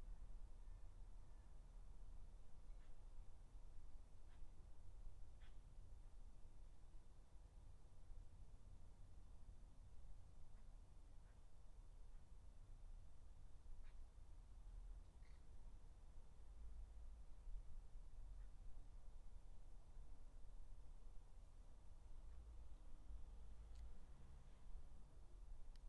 Dorridge, West Midlands, UK - Garden 13
3 minute recording of my back garden recorded on a Yamaha Pocketrak